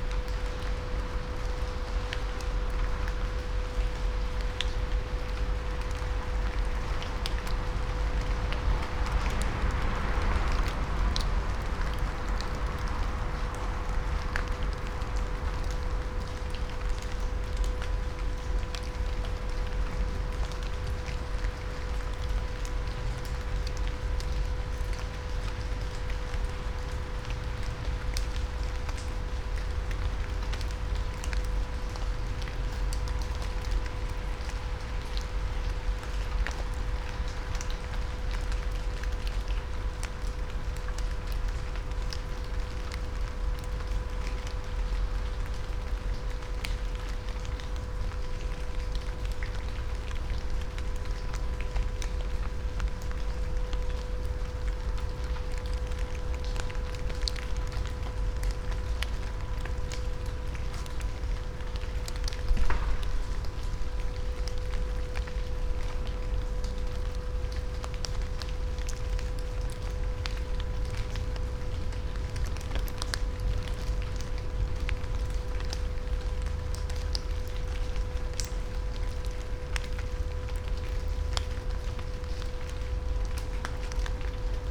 Franzosenweg, Halle (Saale), Deutschland - rain on leaves and drone
Halle, Franzosenweg, rainy Monday night, rain falls on autumn leaves, a seemingly electrical drone is all over the place, could't locate it.
(Sony PCM D50, Primo EM172)
24 October 2016, ~22:00